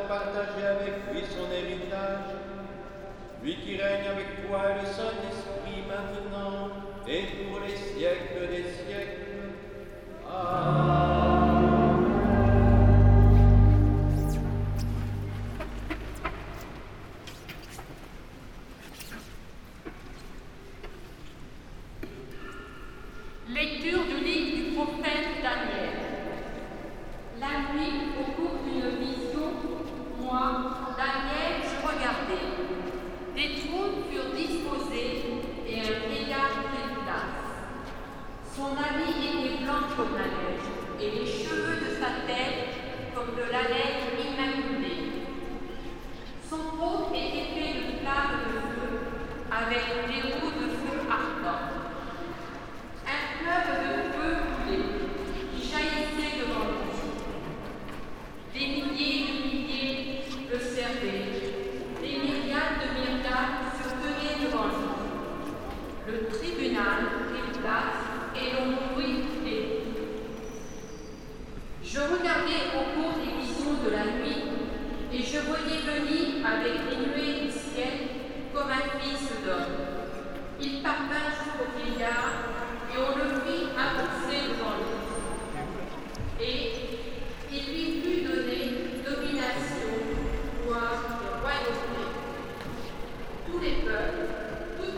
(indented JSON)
{
  "title": "Chartres, France - Mass in the Chartres cathedral",
  "date": "2017-08-05 18:45:00",
  "description": "An excerpt of of the mass in the Chartres cathedral. It's a quite traditional rite, as small parts are in latin.",
  "latitude": "48.45",
  "longitude": "1.49",
  "altitude": "163",
  "timezone": "Europe/Paris"
}